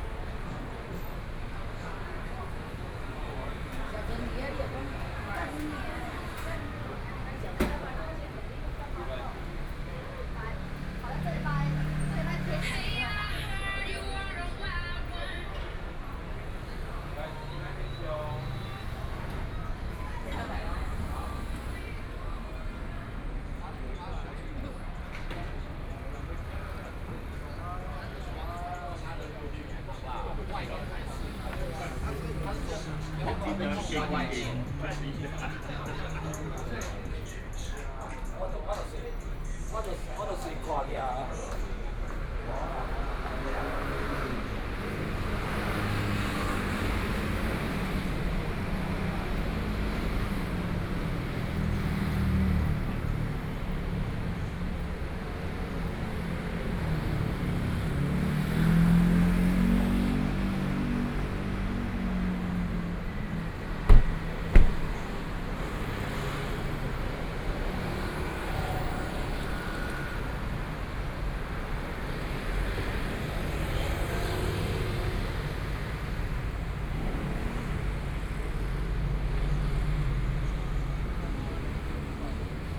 Jinzhou St., Taipei City - walking in the Street
walking in the Street, Various shops voices, Traffic Sound
Please turn up the volume a little. Binaural recordings, Sony PCM D100+ Soundman OKM II
15 April 2014, 21:33